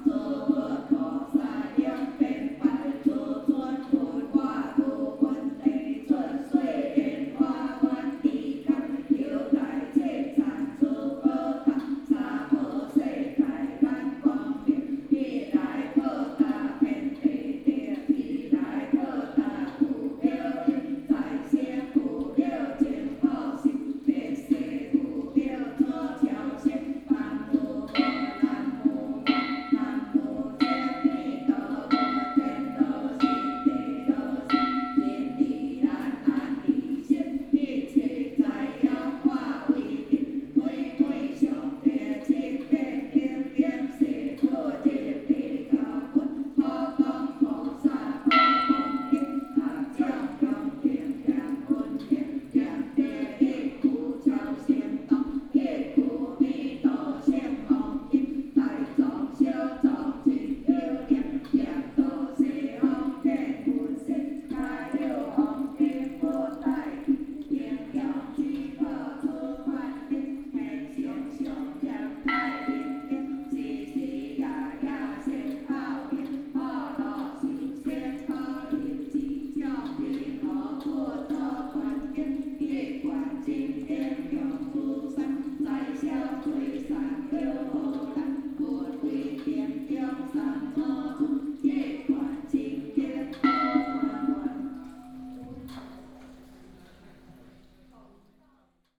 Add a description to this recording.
Chanting, In front of the temple, Rainy Day